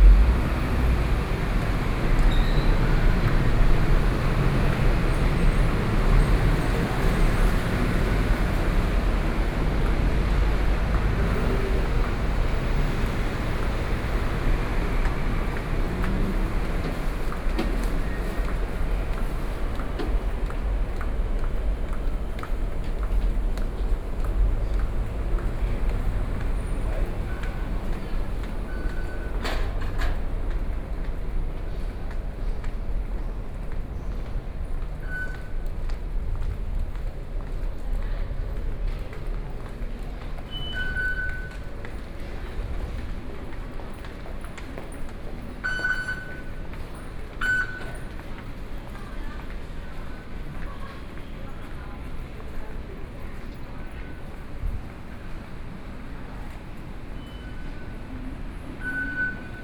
Sun Yat-sen Memorial Hall Station - soundwalk
From the underground passage into the MRT station, Sony PCM D50 + Soundman OKM II
Taipei City, Taiwan, 10 September, 14:53